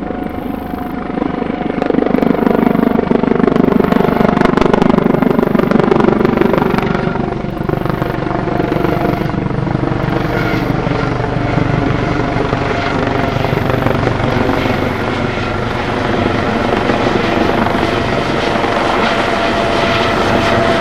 Washington Park, South Doctor Martin Luther King Junior Drive, Chicago, IL, USA - walking washington pk (bugs and helicopters)
10 August 2013, 14:20